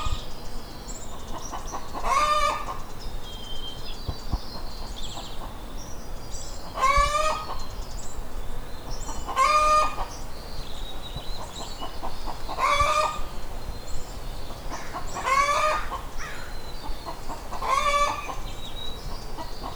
Ave, Sacramento, CA, USA - Sacramento Backyard 3-29-20
Recorded on Zoom H5 in backyard of Library of MusicLandria, near flowering Ceanothus with bees, light rail train, and neighbor's chickens. My first time making a field recording.
29 March 2020, 16:00